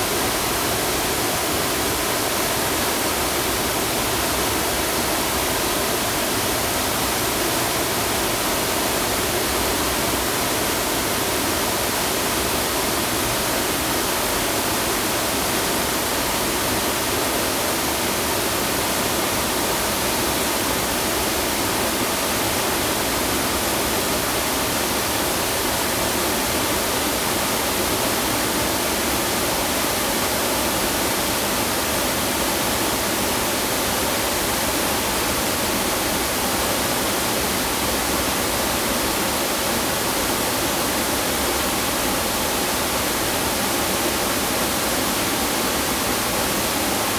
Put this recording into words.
The sound of waterfall, Zoom H2n MS+XY +Spatial audio